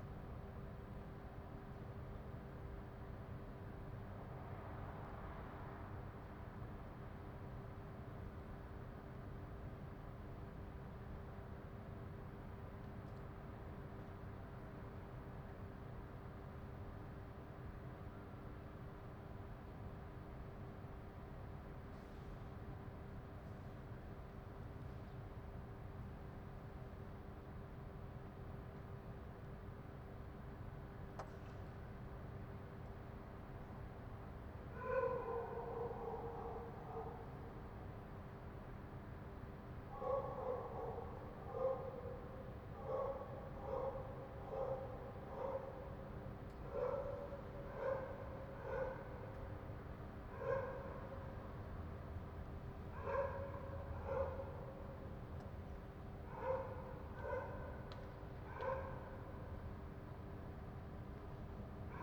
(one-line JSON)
{"title": "Șoseaua Nicolae Titulescu, București, Romania - Quiet night during lockdown", "date": "2020-05-01 22:00:00", "description": "Quiet night time, in a usually very crowded intersection. Dogs barking and an ambulance passing by.", "latitude": "44.45", "longitude": "26.08", "altitude": "89", "timezone": "Europe/Bucharest"}